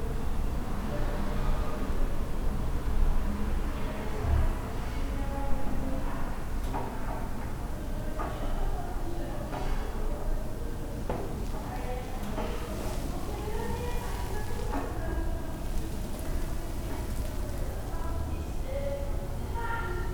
Kochanowskiego, Poznań - gas meter
regular whine and tapping of a gas meter on a staircase of an old apartment building. muffled conversations from behind the door. bit of traffic from the front of the building. (roland r-07)